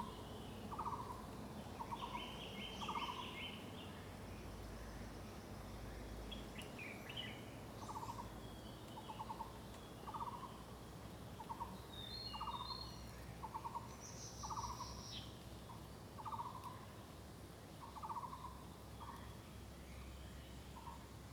Shuishang Ln., Puli Township 桃米里 - Birds singing
In the woods, birds sound
Zoom H2n MS+XY